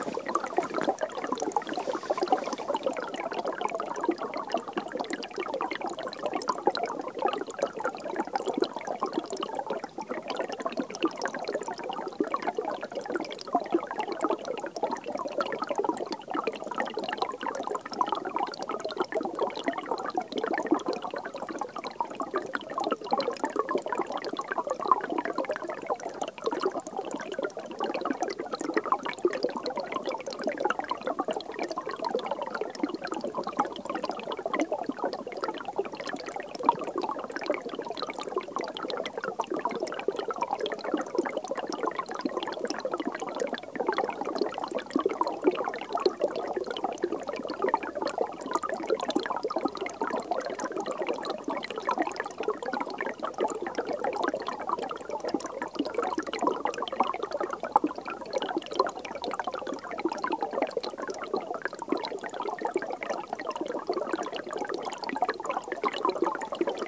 Forêt Domaniale de Mormal, France - Small stream under a thick layer of ice
The recorder is placed directly on the ice, water flows beneath it.
France métropolitaine, European Union, 12 February 2012, 15:08